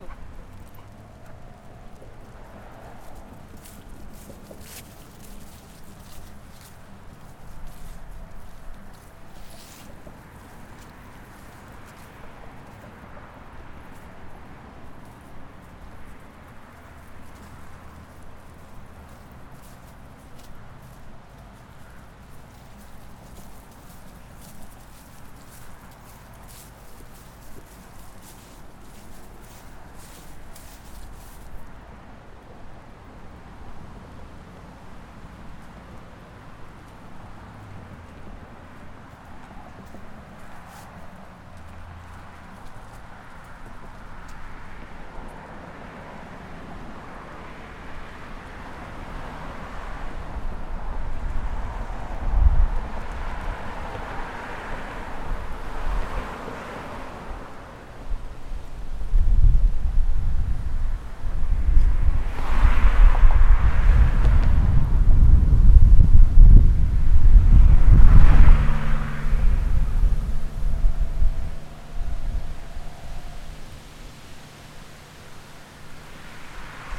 The Glebe, Ottawa, ON, Canada - Windy Walk by the Road
Recorded with an H5 portable microphone in a small park close to a busy roadway. It was an extremely windy day, so it wasn't optimal conditions for recording.